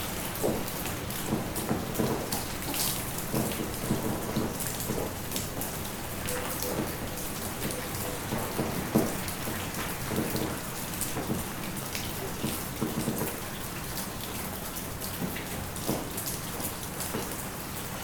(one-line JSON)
{"title": "Fraissinet-de-Fourques, France - Endless rain", "date": "2015-03-03 13:30:00", "description": "In a time of rain don't stop, I seek a refuge in the first barn I find. A puny dog is wandering.", "latitude": "44.23", "longitude": "3.52", "altitude": "1092", "timezone": "Europe/Paris"}